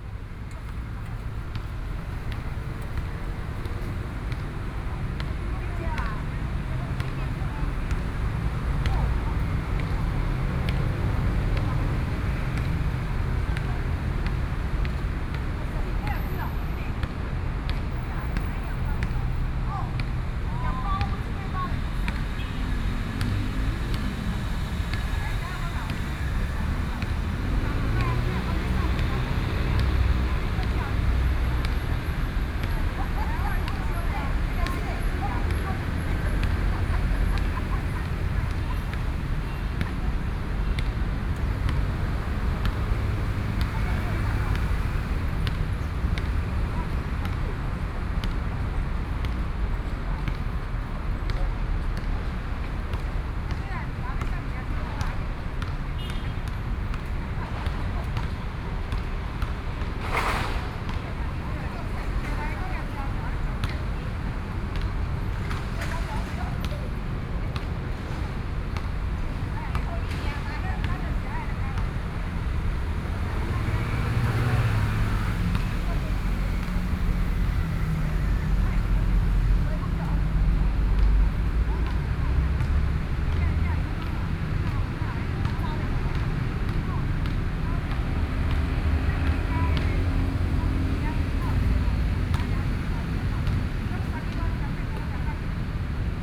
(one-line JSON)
{"title": "Taoying Rd., Taoyuan City - In the park", "date": "2013-09-11 07:44:00", "description": "in the Park, Traffic Noise, Woman talking, Play basketball, Sony PCM D50 + Soundman OKM II", "latitude": "24.99", "longitude": "121.32", "altitude": "97", "timezone": "Asia/Taipei"}